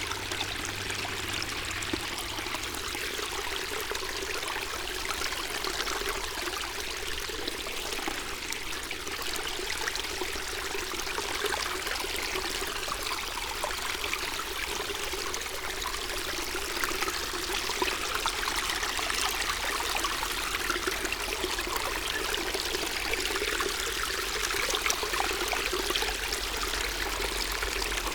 fourth pond, piramida, maribor - in need for a poema
Maribor, Slovenia, 21 February 2014